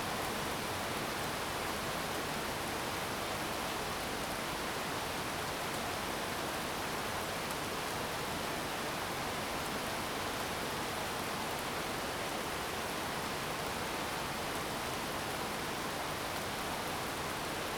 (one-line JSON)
{"title": "走讀桃米, 桃米里 - Thunderstorm", "date": "2016-07-13 17:38:00", "description": "Thunderstorm\nZoom H2n Spatial audio", "latitude": "23.94", "longitude": "120.93", "altitude": "463", "timezone": "Asia/Taipei"}